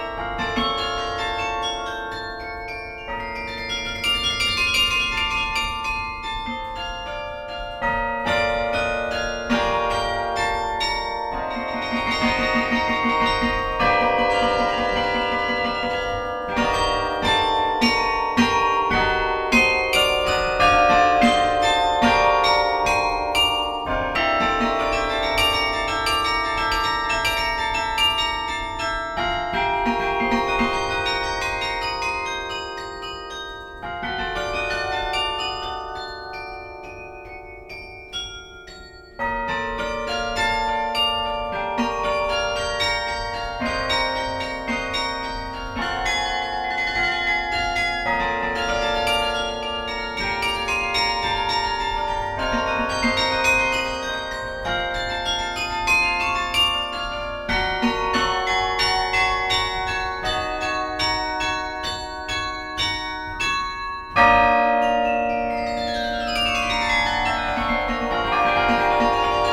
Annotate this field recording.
The Gembloux carillon, played by Gilles Lerouge, a carillon player coming from France (Saint-Amand-Les-Eaux). Recorded inside the belfry by Emmanuel Delsaute.